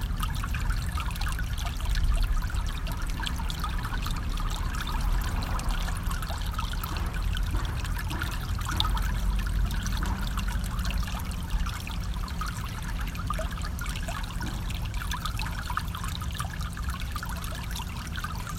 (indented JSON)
{
  "title": "Sheridan Ave, Iowa City, IA, USA Longellow Trailhead, Ralston Creek - A Gurgling Eddy of Ralston Creek, Iow City, IA",
  "date": "2018-09-10 11:30:00",
  "description": "This is a brief recording of the Ralston Creek, which cuts through Iowa City. This is near the Longfellow Trailhead off of Grant Street and Sheridan Avenues. Notice the faint sounds of hammering in the distance to the east. I recorded about a foot above the the eddy using a Tascam DR100 MKiii. This recording was captured on a crisp, Indian Summer day with a slight westerly breeze.",
  "latitude": "41.65",
  "longitude": "-91.51",
  "altitude": "206",
  "timezone": "GMT+1"
}